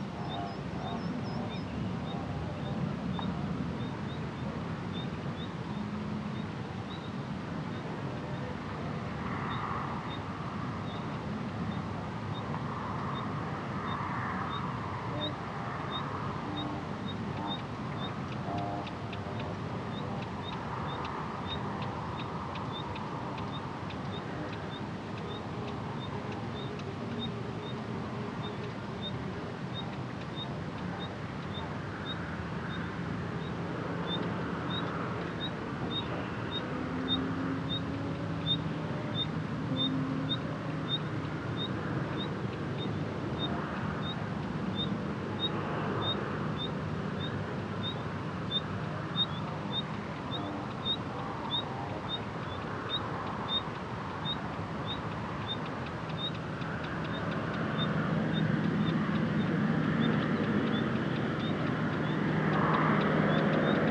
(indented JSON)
{
  "title": "SBG, Serra del Oratori - Mediodía",
  "date": "2011-07-24 12:00:00",
  "description": "Paisaje sonoro en lo alto de la sierra (900m). Sonidos distantes del tráfico y maquinaria agricola conviven con las aves que habitan en la vegetación que se extiende por la ladera.",
  "latitude": "41.98",
  "longitude": "2.18",
  "altitude": "889",
  "timezone": "Europe/Madrid"
}